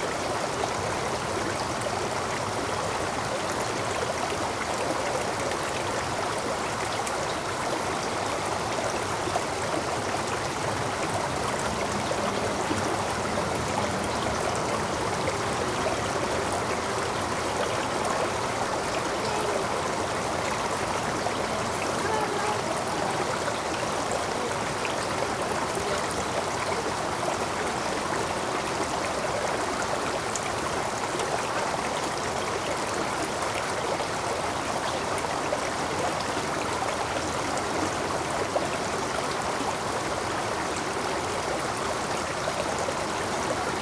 Rottenwood Creek Trail, Atlanta, GA, USA - Small Stream
This is a small stream that flows under part of the Rottenwood Creek Trail and into the river. The recorder was placed to the side of the trail right next to the stream. You can hear the water flow right to left, as well as some people people walking on the right.
This audio was recorded with the unidirectional mics of the Tascam Dr-100mkiii. Minor EQ was done to improve clarity.